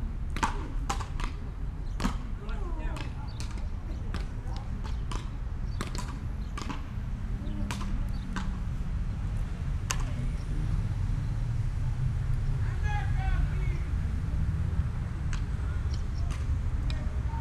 Athen, Palaio Faliro, Leof. Posidonos - beachball players
stereo beachball players at Leof. Posidonos
(Sony PCM D50, DPA4060)